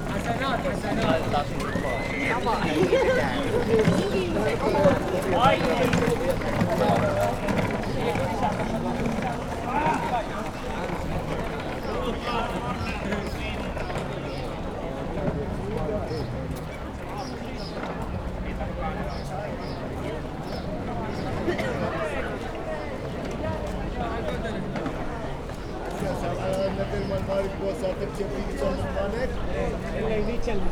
Torinranta, Oulu, Finland - Friday evening at the waterfront, Oulu
During warm summer evenings people like to gather around at the waterfront next to the market square of Oulu. Zoom H5, default X/Y module